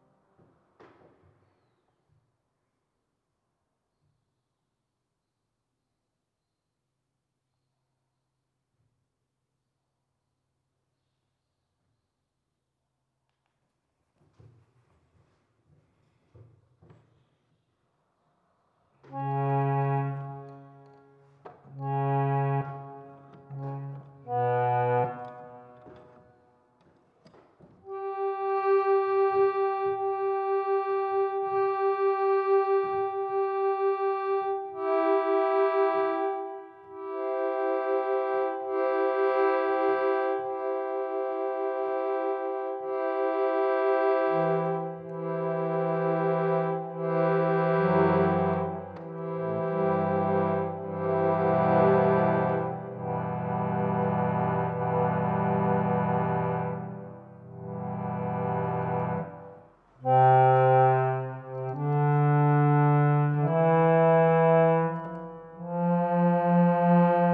Eglise, Niévroz, France - Playing the harmonium in the church
Tech Note : Sony PCM-M10 internal microphones.